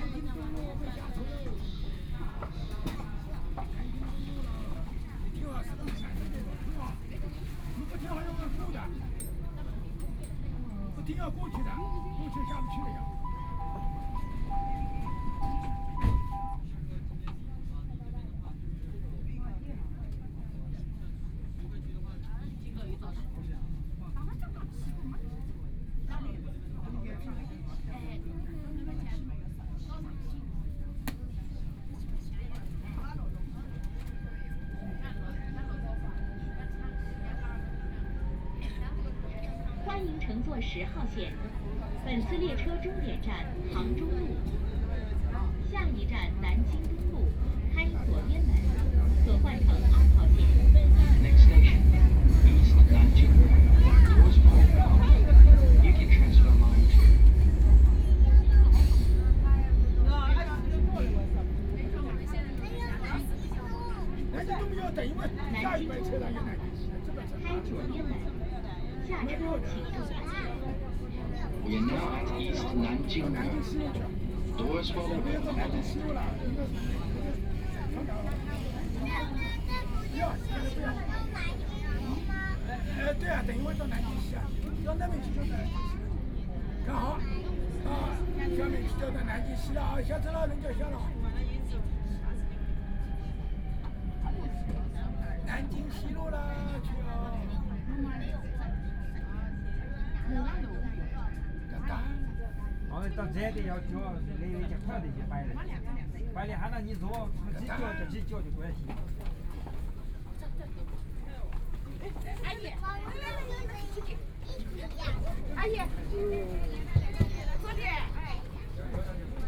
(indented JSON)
{
  "title": "Zhabei District, Shanghai - Line 10 (Shanghai Metro)",
  "date": "2013-11-25 13:09:00",
  "description": "from Hailun Road station to East Nanjing Road station, Binaural recording, Zoom H6+ Soundman OKM II",
  "latitude": "31.25",
  "longitude": "121.48",
  "altitude": "18",
  "timezone": "Asia/Shanghai"
}